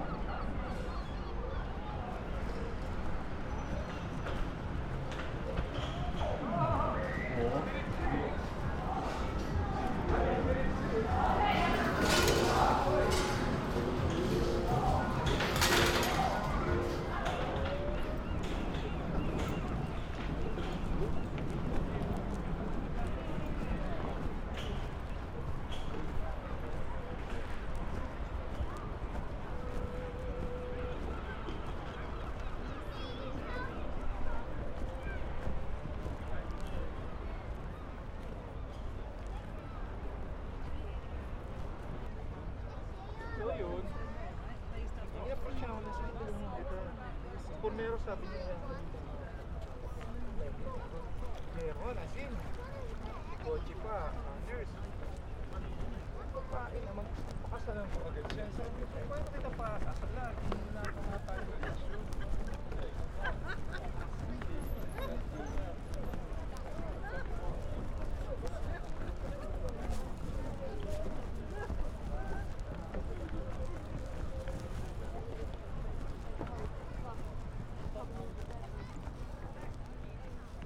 Soundwalk on Hastings lovely 'new' minimalist pier, inside the arcades and out onto the Pier itself. Recorded with a Marantz PMD661 with Lom Usi Pro mics. Mounted inside a backpack with mics poking through top with a 'dead kitten' style wind cover for stealth purposes.
Bank Holiday Weekend, 2019